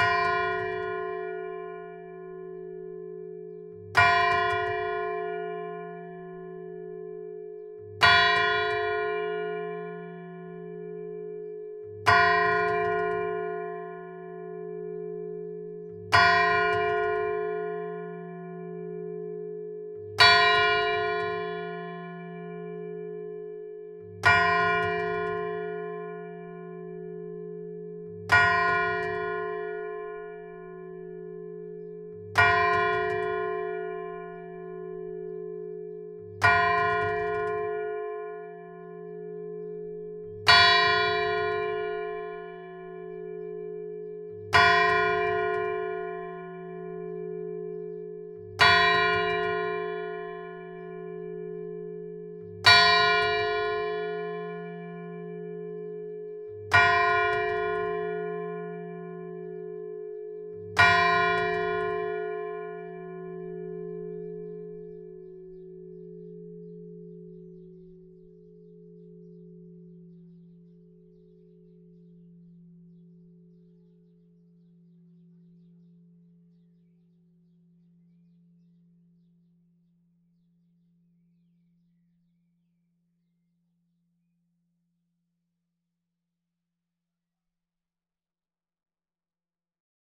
Ctr de l'Église, Mametz, France - église St-Vaast de Mametz - Pas-de-Calais - le Glas
église St-Vaast de Mametz - Pas-de-Calais
Une seule cloche - Le Glas
"Cette cloche a été fondue le 14 juillet 1862 et bénite solennellement sous l’administration de Messieurs
Chartier Prosper maire de la commune de Mametz département du Pas de Calais et Scat Jean-Baptiste Adjoint. Monsieur l’abbé Delton, Amable Jean-Baptiste desservant la paroisse de ladite commune.
Elle a reçu les noms de Félicie Marie Florentine de ses parrain et Marraine Monsieur Prisse Albert Florian Joseph attaché au Ministère des Finances et Madame Chartier Prosper née Félicie Rosamonde Lahure."
24 May, 11:00